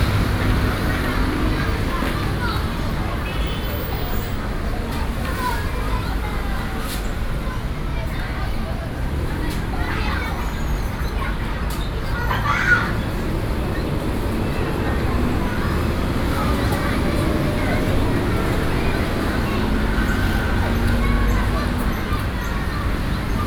{"title": "Bǎoqiáo Road, Xindian, New Taipei City - Street corner", "date": "2012-06-28 16:19:00", "description": "The end of the course the students leave school, Zoom H4n+ Soundman OKM II", "latitude": "24.97", "longitude": "121.54", "altitude": "27", "timezone": "Asia/Taipei"}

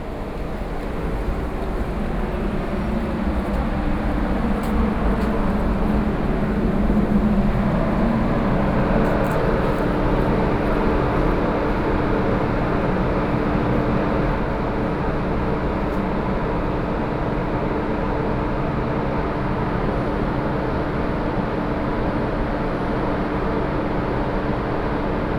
{"title": "Banqiao District, Taiwan - Exhaust air noise", "date": "2013-10-12 15:17:00", "description": "Exhaust air noise, Binaural recordings, Sony PCM D50+ Soundman OKM II", "latitude": "25.01", "longitude": "121.47", "altitude": "12", "timezone": "Asia/Taipei"}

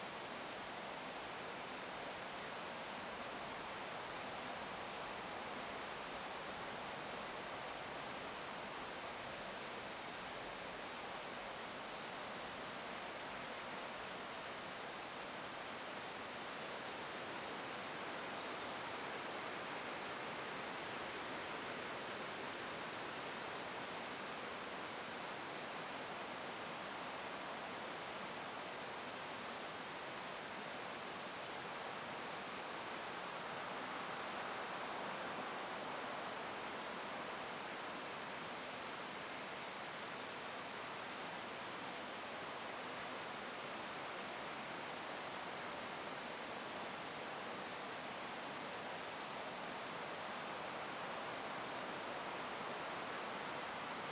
{"title": "Gowbarrow Hill - Forest recording", "date": "2020-09-09 06:50:00", "description": "Sony PCM-A10 and LOM Mikro USI's left in the forest while making breakfast and unsetting camp.", "latitude": "54.59", "longitude": "-2.91", "altitude": "371", "timezone": "Europe/London"}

